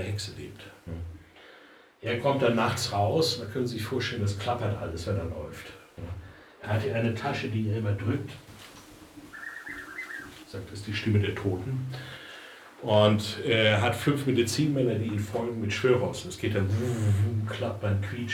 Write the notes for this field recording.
Bei der Besichtigung des Soul of Africa Museums. Der Klang der Stimmme des Museumsleiters Henning Christoph bei der Beschreibung seiner Exponate. Hier auch der Klang eines rituellen Medizinmann Kostüms. At a visit at the soul of africa museum. the sound of the voice of the director Henning Christoph giving a tour through his collection. Here the sound of a ritual mecine man costume. Projekt - Stadtklang//: Hörorte - topographic field recordings and social ambiences